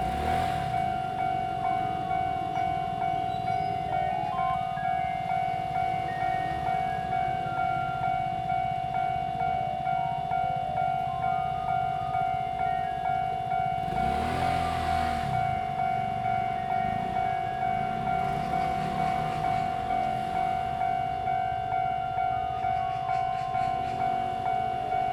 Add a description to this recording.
In the railway level road, Traffic sound, Train traveling through, Zoom H2n MS+XY